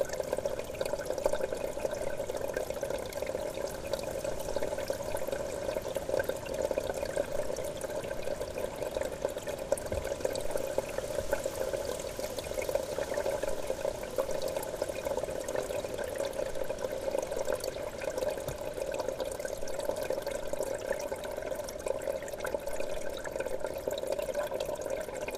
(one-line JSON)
{"title": "Trehörningsjö, Bäck - Bubbling brook", "date": "2010-07-18 18:16:00", "description": "A small bubbling brook beside the small road bridge.The recording was taking place during the soundwalk on the World Listening Day, 18th july 2010 - Ljudvandring i Trehörningsjö.", "latitude": "63.69", "longitude": "18.86", "altitude": "160", "timezone": "Europe/Stockholm"}